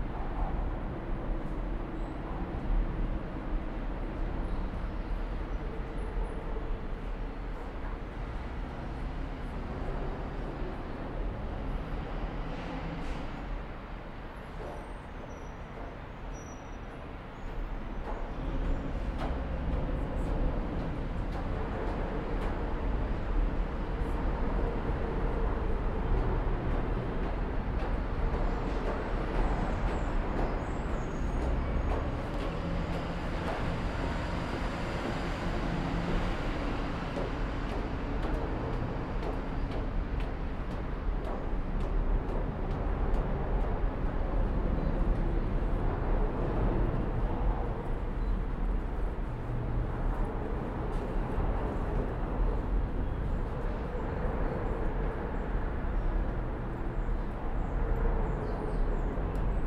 Pulaski Bridge, NY, Verenigde Staten - Bridge stairs
Zoom H4n Pro
November 2019